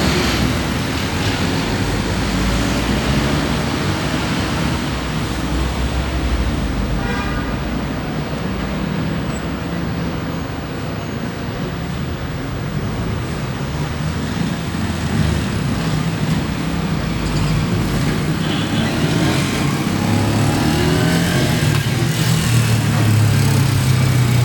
Urban soundscape in the morning of the pontevedra neighborhood in the city of Bogota, where you can hear the sound of the wind and traffic, where you can hear the sounds of cars and motorcycles.
You can also hear the sound of street vendors and some voices of passersby.
Colombia, 25 October 2021, ~11:00